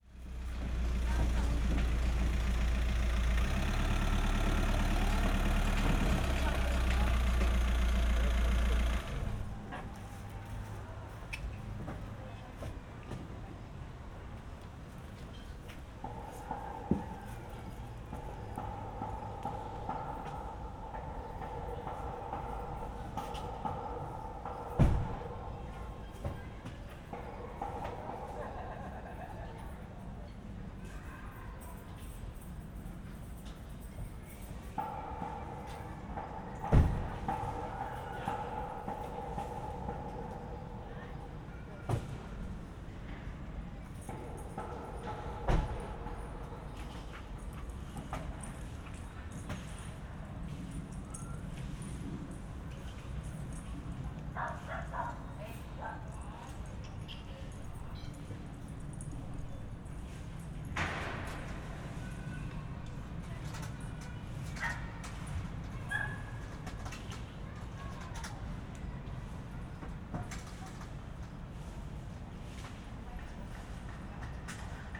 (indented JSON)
{
  "title": "berlin, gropiushaus",
  "date": "2011-08-03 17:40:00",
  "description": "soundscape within half circle of gropiushaus",
  "latitude": "52.43",
  "longitude": "13.47",
  "altitude": "47",
  "timezone": "Europe/Berlin"
}